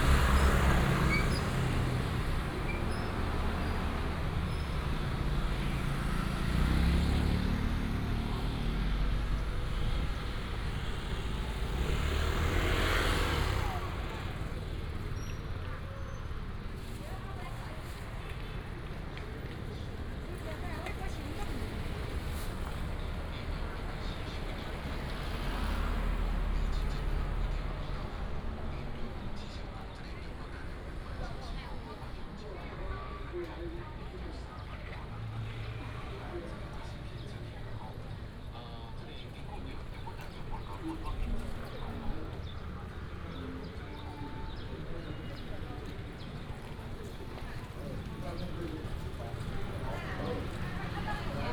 Sec., Douzhong Rd., Tianzhong Township - Walking in the traditional market
Walking in the traditional market, Traffic sound
6 April 2017, ~10:00